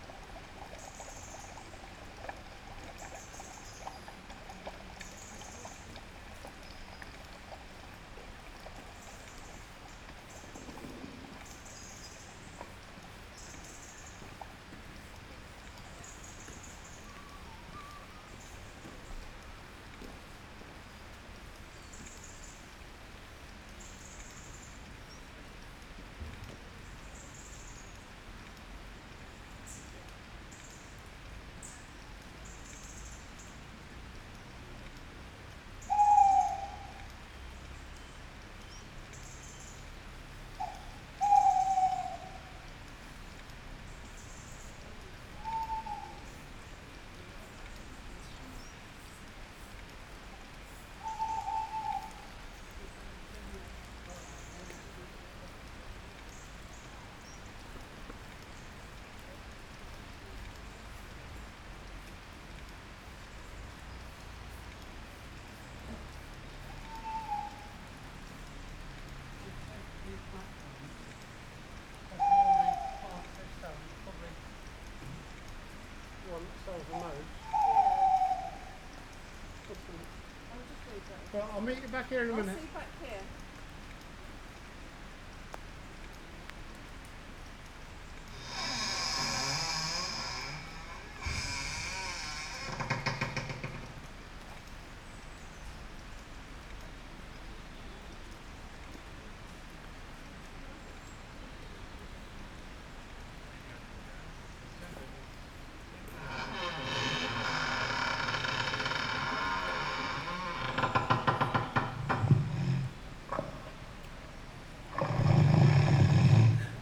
Alnwick Gardens, Alnwick, UK - Forgotten Garden Adventure Golf soundtrack ...
Adventure Golf ... Alnwick Gardens ... recording of soundtrack ..? tape loop ..? sound installation ..? as background to this feature ... stood next to one speaker recorded with open lavaliers clipped to baseball cap ... background noise of wind ... rain ... voices ... and a robin ...